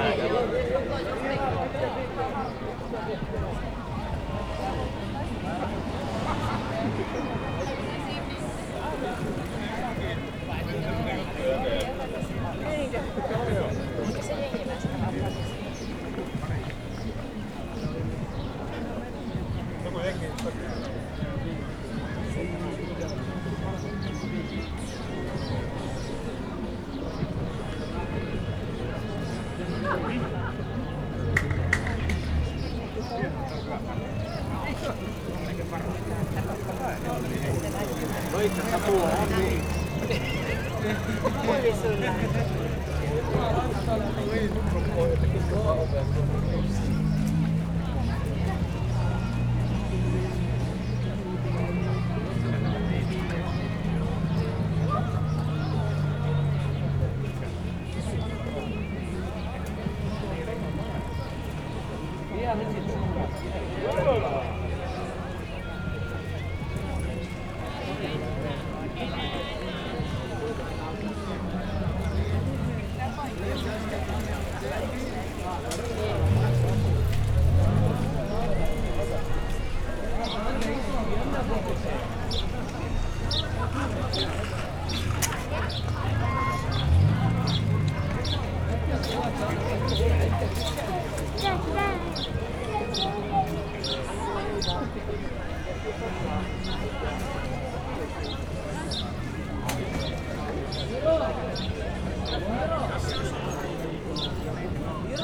Torinranta, Oulu, Finland - Friday evening at the waterfront, Oulu
During warm summer evenings people like to gather around at the waterfront next to the market square of Oulu. Zoom H5, default X/Y module
Manner-Suomi, Suomi, 12 June 2020